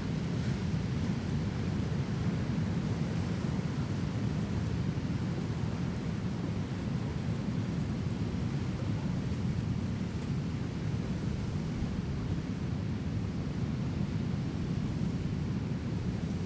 Humboldt County, CA, USA - PETROLIA BEACH, THANKSGIVING DAY 2013
roar of Pacific ocean on the beach in Petrolia, Ca